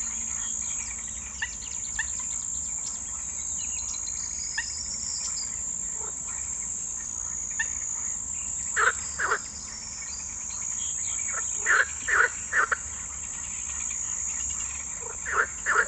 Neo Thronio coast, evening sounds - crickets, birds, frogs.
Coastal area with grasses. Corn bunting, Cettis warbler, crickets, frogs.
Kamena Vourla, Greece, 29 May 2011